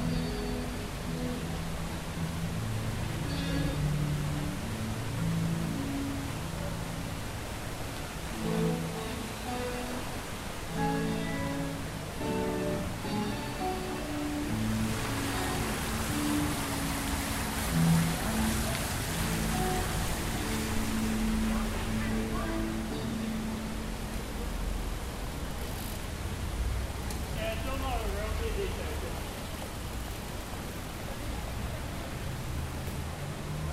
Ambient atmosphere, on a sunny afternoon in Auckland City.